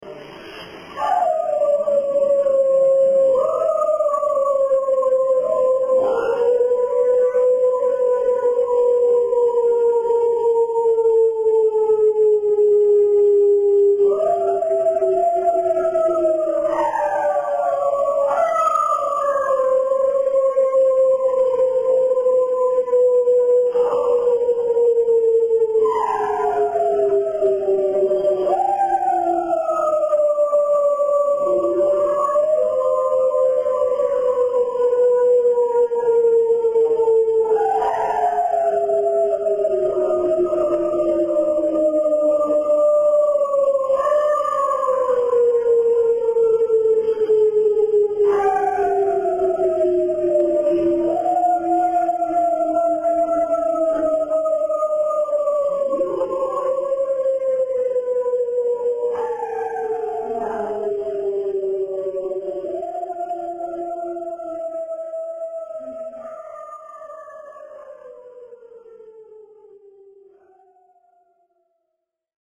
Passo Cereda (Trento) Italy
Campeggio famiglie a Passo Cereda (Trento): la sveglia del campo.